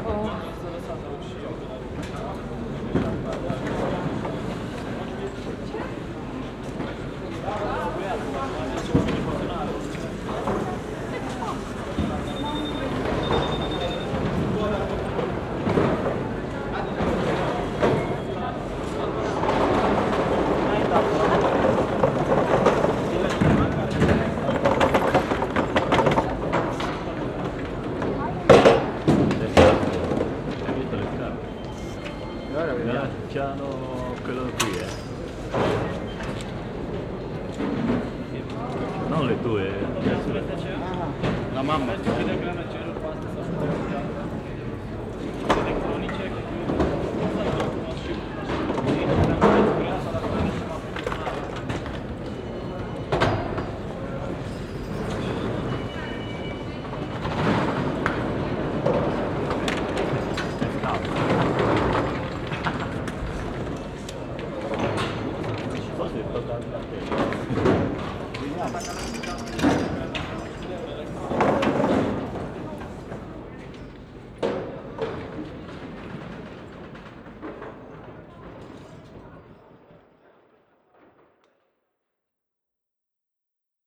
Napoca Airport (CLJ), Strada Traian Vuia, Cluj-Napoca, Rumänien - Cluj, airport, security check
Inside the small airport of Cluj. The sounds at the security check in. Plastic boxes on the conveyer belt, electronic beeps from the body control advices from the security team and voices of the passengers .
International city scapes - topographic field recordings and social ambiences
Romania, 18 November, 12:15